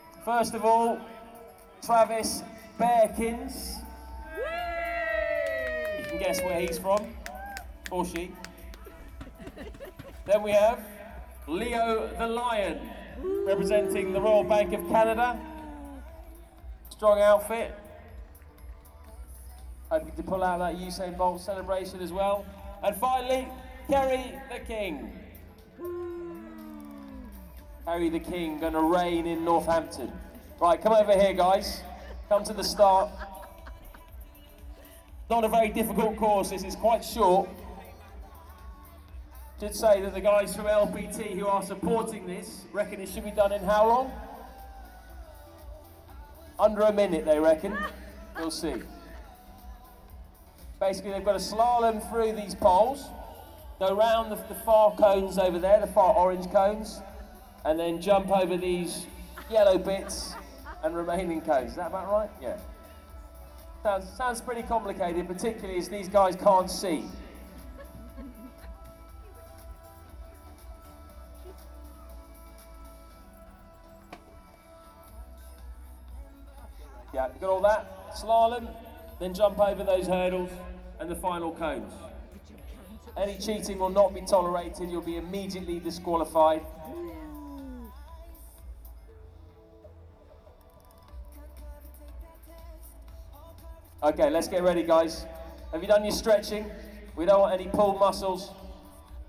Mascot race ... part of a ProAm T20 Cricket final ... some small family involvement ... open lavalier mics clipped to base ball cap ...
20 August 2017, 17:30